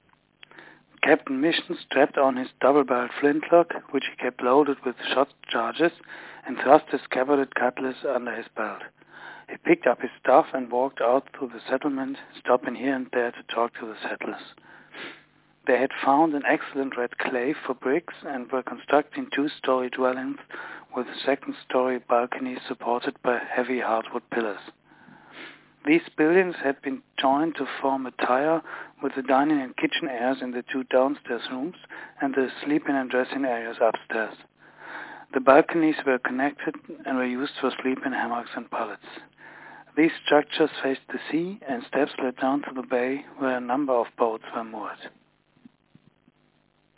Libertatia, a free colony founded by Captain Mission in the late 1600s
Madagascar